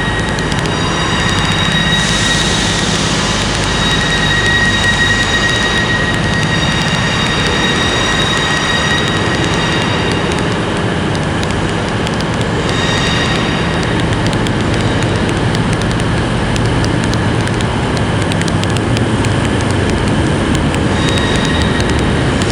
Glasgow, UK - Interference Between Platforms 5-6
Recorded with an Audio-Technica AT825b (stereo x/y) into a Sound Devices 633.